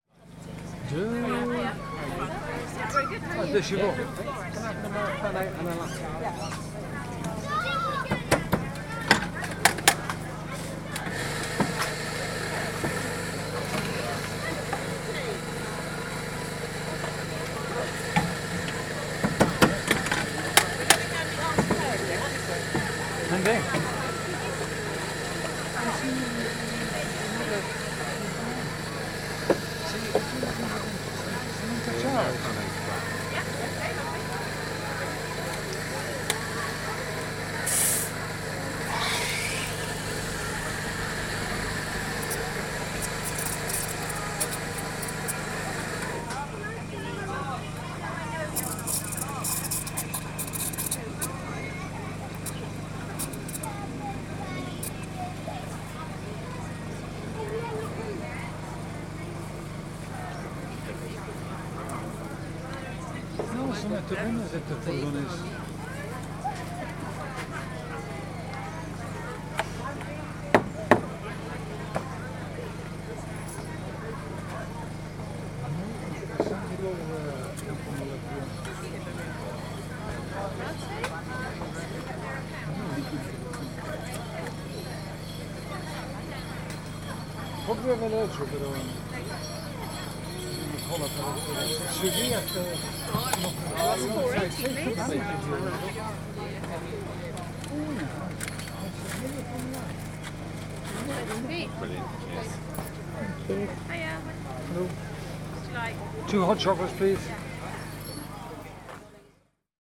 The Street, South Stoke, UK - The sweetest little coffee-making van
This is the sound of the loveliest little coffee van with generator, parked up on The Street serving delicious fresh ground coffees to the weary listeners who had been up recording sounds since 8am.